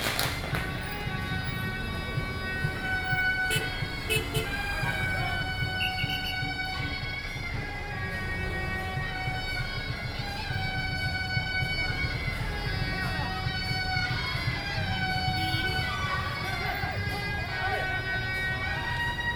Chelutou St., Sanchong Dist., New Taipei City - Traditional temple festivals
New Taipei City, Taiwan